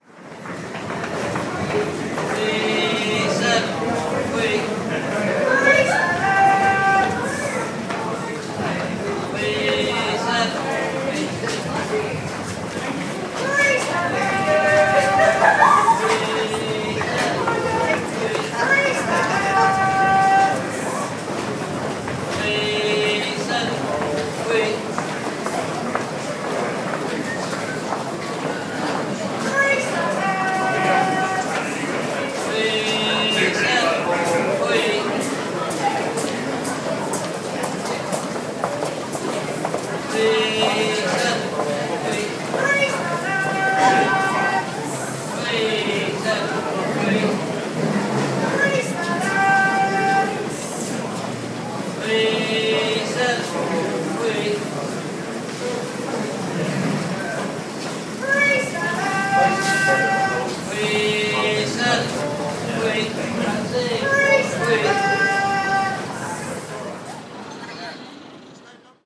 London Borough of Southwark, Greater London, UK - Evening Standard Vendors London Bridge Station
Duo by two Evening Standard Vendors during the evening rush hour.